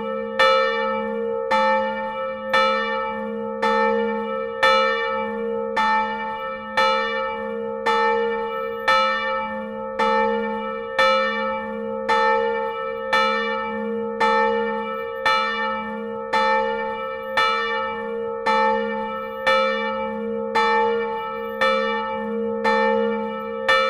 {
  "title": "Ath, Belgique - Bouvignies bell",
  "date": "2012-07-08 11:10:00",
  "description": "The Bouvignies bell ringed manually in the bell tower.",
  "latitude": "50.65",
  "longitude": "3.77",
  "altitude": "39",
  "timezone": "Europe/Brussels"
}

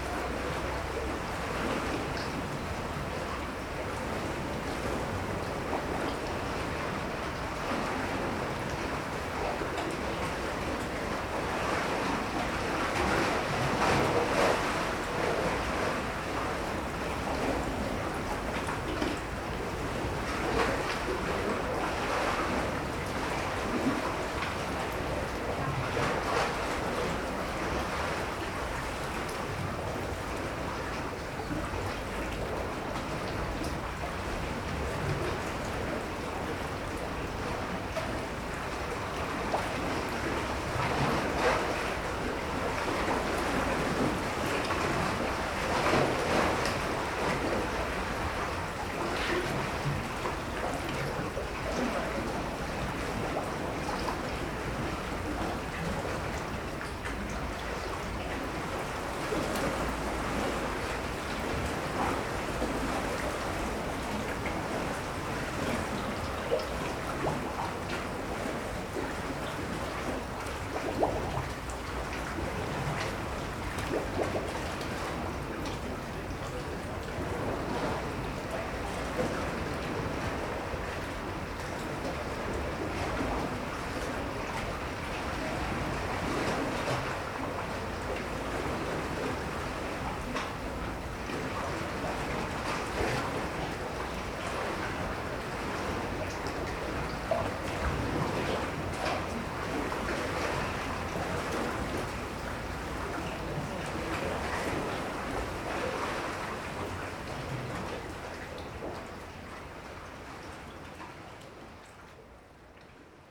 {
  "title": "Chrysopighi, Sifnos, Greece - Chrysopighi - under the bridge",
  "date": "2015-08-06 18:49:00",
  "description": "recorded under the bridge that joins the two sections of Chrysopighi monastery on Sifnos. a narrow gash in the rocks, creating a reverberant space. waves, pigeons. AT8022 / Tascam DR40",
  "latitude": "36.94",
  "longitude": "24.75",
  "altitude": "4",
  "timezone": "Europe/Athens"
}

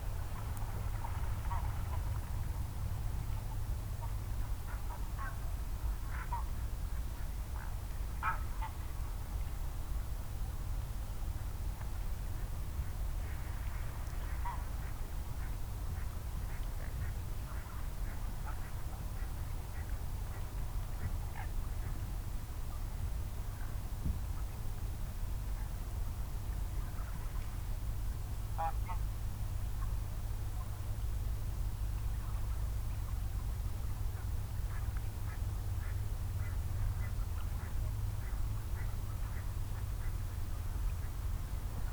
{
  "title": "lancken-granitz: neuensiener see - the city, the country & me: evening ambience",
  "date": "2013-03-05 19:06:00",
  "description": "sheep, wild geese, ducks, barking dogs and other busy animals\nthe city, the country & me: march 5, 2013",
  "latitude": "54.36",
  "longitude": "13.64",
  "timezone": "Europe/Berlin"
}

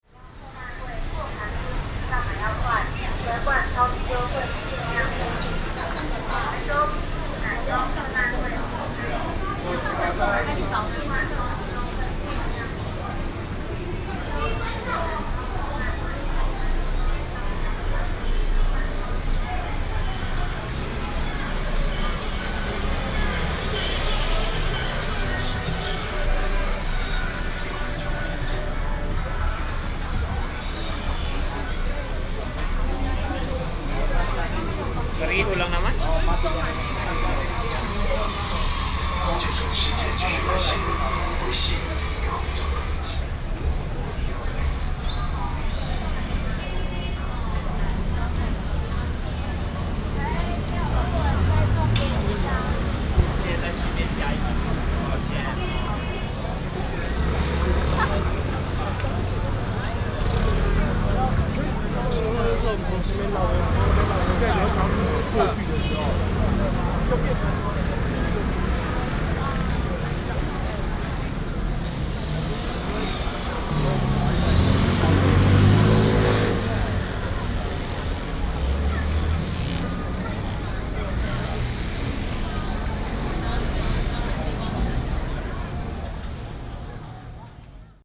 {
  "title": "Ren 2nd Rd., Ren’ai Dist., Keelung City - walking in the Street",
  "date": "2016-07-16 18:53:00",
  "description": "Various shops sound, Traffic Sound, walking in the Street",
  "latitude": "25.13",
  "longitude": "121.74",
  "altitude": "16",
  "timezone": "Asia/Taipei"
}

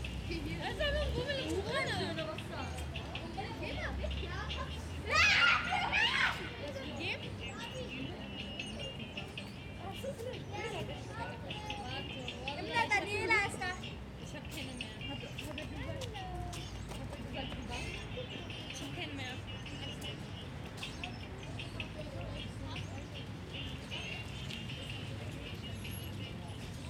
{
  "title": "Wollankstraße, Soldiner Kiez, Berlin, Deutschland - Wollankstraße 96C, Berlin - Teenagers in the backyard, hanging around on a Sunday afternoon",
  "date": "2012-10-15 15:51:00",
  "description": "Wollankstraße 96C, Berlin - Teenagers in the backyard, hanging around on a Sunday afternoon. Even if there is already a radio playing in the backyard, the teenagers play their own music via smartphone.\n[I used Hi-MD-recorder Sony MZ-NH900 with external microphone Beyerdynamic MCE 82]\nWollankstraße 96C, Berlin - Teenager hängen an einem Sonntagnachmittag im Hinterhof herum. Auch wenn der Hof bereits von einem Radio beschallt wird, dudelt ein Smartphone parallel dazu.\n[Aufgenommen mit Hi-MD-recorder Sony MZ-NH900 und externem Mikrophon Beyerdynamic MCE 82]",
  "latitude": "52.56",
  "longitude": "13.39",
  "altitude": "47",
  "timezone": "Europe/Berlin"
}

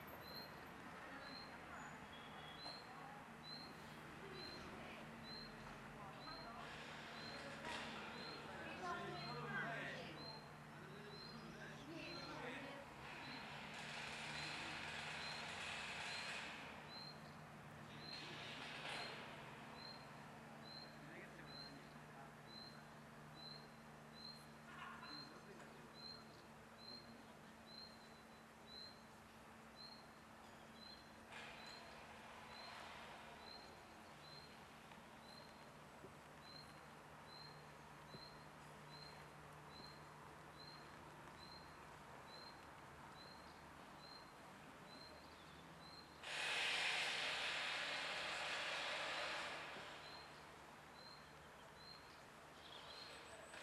{
  "title": "L'Aquila, Collemaggio - 2017-05-29 02-Collemaggio",
  "date": "2017-05-29 12:55:00",
  "latitude": "42.34",
  "longitude": "13.40",
  "altitude": "691",
  "timezone": "Europe/Rome"
}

